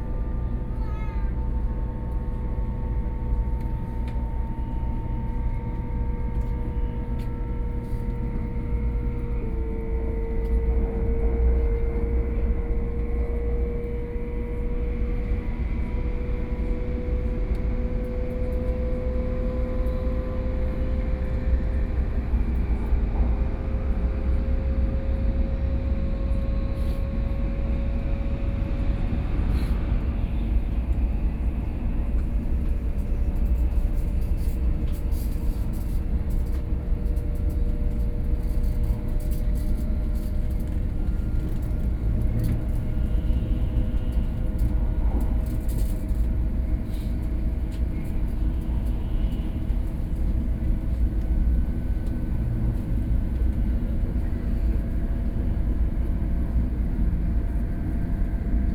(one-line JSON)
{"title": "Yangmei City, Taoyuan County - High-speed rail train", "date": "2013-05-12 18:54:00", "description": "inside the High-speed rail train, Sony PCM D50 + Soundman OKM II", "latitude": "24.95", "longitude": "121.13", "altitude": "106", "timezone": "Asia/Taipei"}